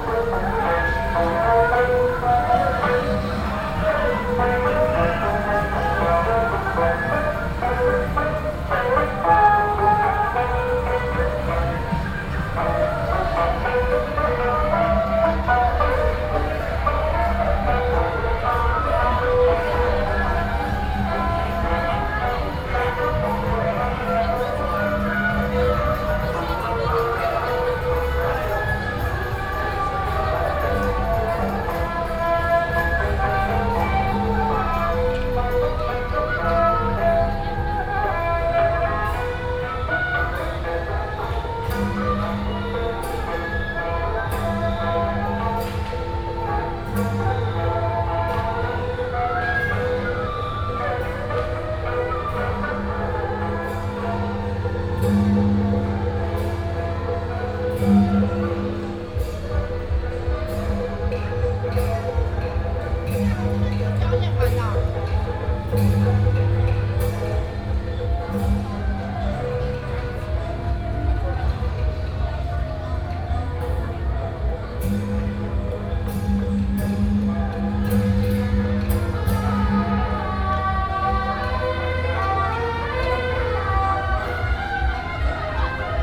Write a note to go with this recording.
Festivals, Walking on the road, Variety show, Keelung Mid.Summer Ghost Festival